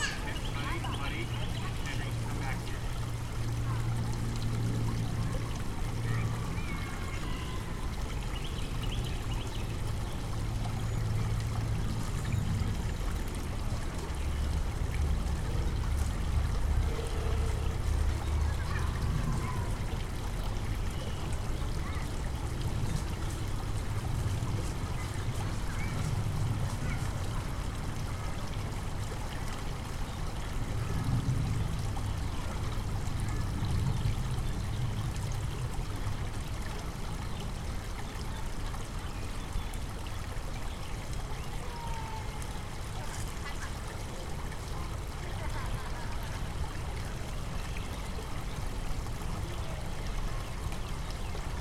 Peachtree Dunwoody Rd, Atlanta, GA, USA - Little Nancy Creek
A recording made along Little Nancy Creek. The trickling of water is the predominant sound, but children in the background are still quite audible. There are birds and other environmental sounds interspersed throughout the recording. A dried leaf can be heard rustling in close proximity to the left microphone at the end of the recording. This recording was made using the "tree ears" strategy, whereby the microphones were mounted on each side of a medium-sized tree. The result is a large stereo separation.
[Tascam Dr-100mkiii & Primo EM272 omni mics)